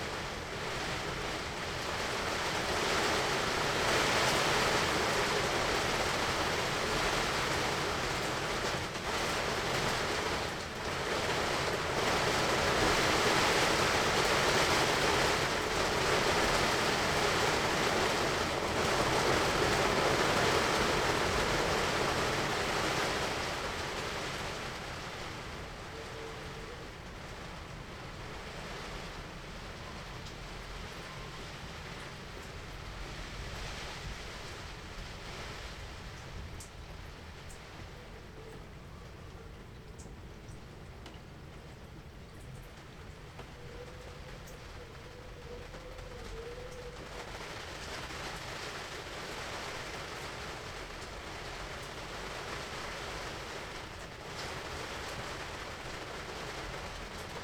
workum, het zool: marina, berth h - the city, the country & me: marina, aboard a sailing yacht
rain hits the tarp, radio traffic on channel 73
the city, the country & me: july 18, 2009
Workum, The Netherlands, 18 July, ~13:00